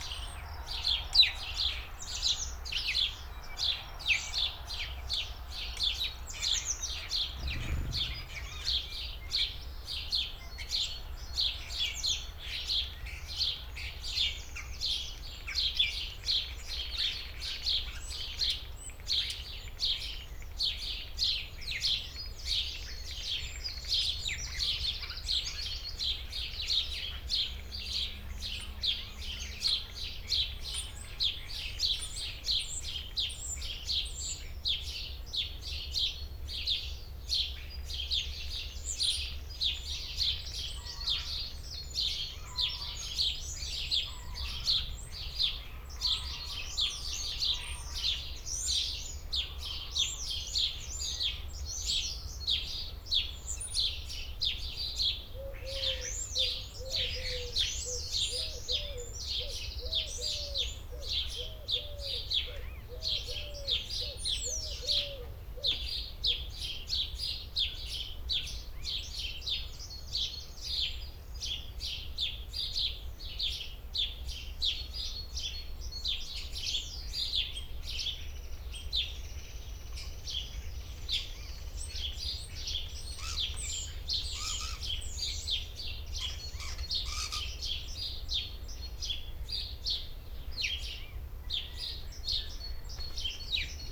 Vierhuisterweg, Rohel, Nederland - early morning birds in Rohel, Fryslãn
i woke up to pee and, hearing the birds outsde, switched on my recorder and went back to sleep for another hour or so.
Spring has just begun, not all birds have returned yet, the blackhat is the latest arrivalk. Enjoy